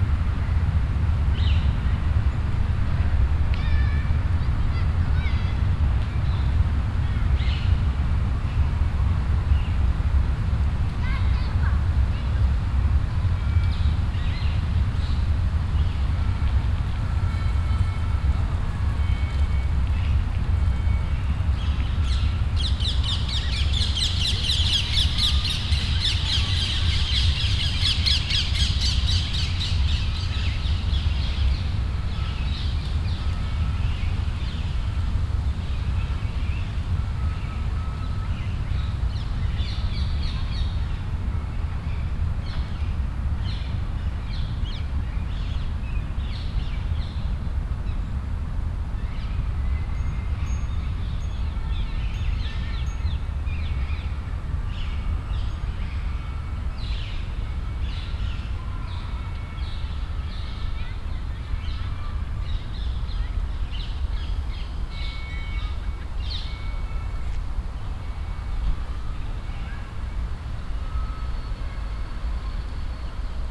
cologne, stadtgarten, park, voegel im baum
stereofeldaufnahmen im september 07 mittags
project: klang raum garten/ sound in public spaces - in & outdoor nearfield recordings